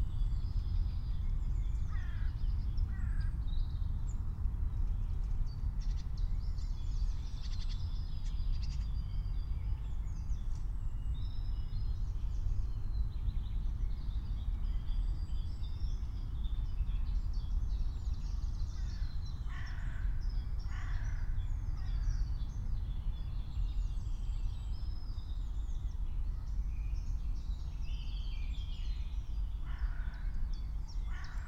08:59 Berlin, Königsheide, Teich - pond ambience
Deutschland, 1 May, ~09:00